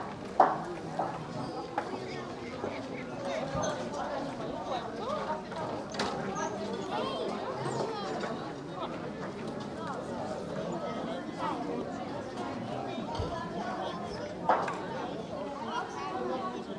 {
  "title": "Le Bourg, Loubejac, France - Market, bells at 7 pm.",
  "date": "2022-08-18 18:58:00",
  "description": "Marché des producteurs, cloches de 19h00.\nTech Note : Sony PCM-M10 internal microphones.",
  "latitude": "44.59",
  "longitude": "1.09",
  "altitude": "269",
  "timezone": "Europe/Paris"
}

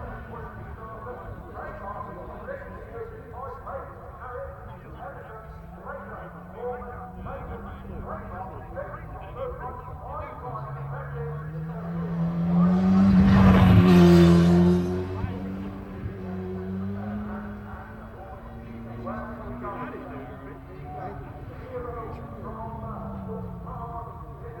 {
  "title": "Leicester, UK - british superbikes 2002 ... superbikes ...",
  "date": "2002-09-14 16:00:00",
  "description": "british superbikes 2002 ... superbikes superpole ... mallory park ... one point stereo mic to minidisk ... date correct ... time not ...",
  "latitude": "52.60",
  "longitude": "-1.34",
  "altitude": "118",
  "timezone": "Europe/London"
}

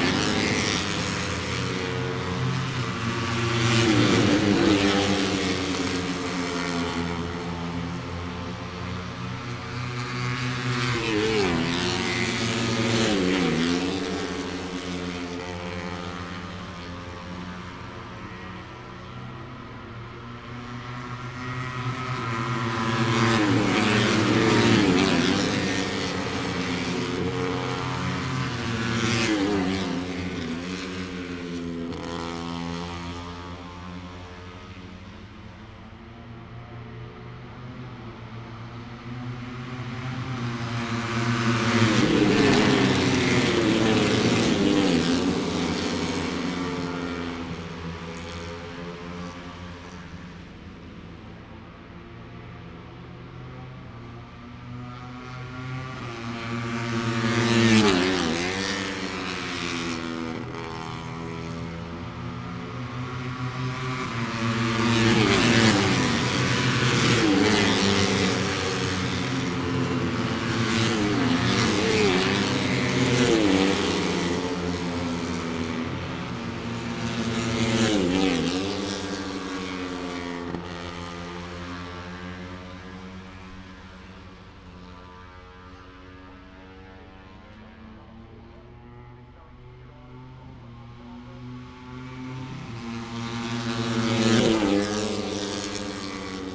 {
  "title": "Lillingstone Dayrell with Luffield Abbey, UK - British Motorcycle Grand Prix 2016 ... moto three ...",
  "date": "2016-09-02 09:20:00",
  "description": "Moto three ... Free practice one ... International Pit Straight ... open lavalier mics on T bar ...",
  "latitude": "52.07",
  "longitude": "-1.02",
  "altitude": "149",
  "timezone": "Europe/London"
}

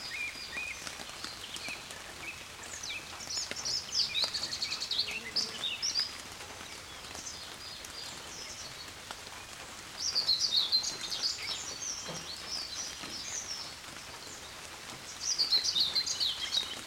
{
  "title": "Palupõhja village, Estonia - Early morning rainshower in a garden",
  "date": "2009-06-03 06:05:00",
  "description": "3. June 2009, 6 AM. Common Whitethroat, Pied Flycatcher, Song Thrush",
  "latitude": "58.42",
  "longitude": "26.23",
  "altitude": "38",
  "timezone": "Europe/Tallinn"
}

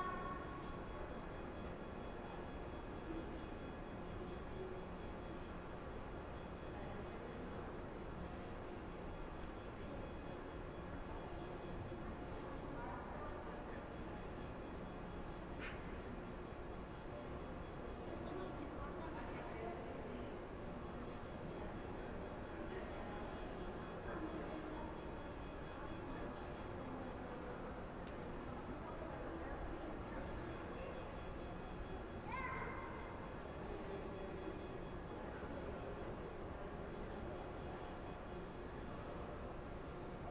{
  "title": "Flushing Meadows Corona Park, Queens, NY, USA - Panorama Of The City Of New York 2",
  "date": "2017-03-04 14:50:00",
  "description": "Standing under the flight path of a model plane landing and taking off from a model LaGuardia Airport in the Panorama of The City of New York Exhibit in The Queens Museum",
  "latitude": "40.75",
  "longitude": "-73.85",
  "altitude": "7",
  "timezone": "America/New_York"
}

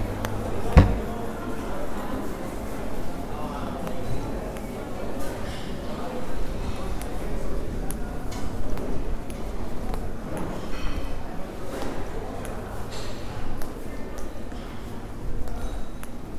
{
  "title": "Princes St, Centre, Cork, Ireland - English Market",
  "date": "2018-11-09 16:52:00",
  "description": "A coffee and a walk through the English Market on a Bustling Saturday Morning. Market sounds topped off with some spontaneous tin whistling near the end.",
  "latitude": "51.90",
  "longitude": "-8.47",
  "altitude": "4",
  "timezone": "Europe/Dublin"
}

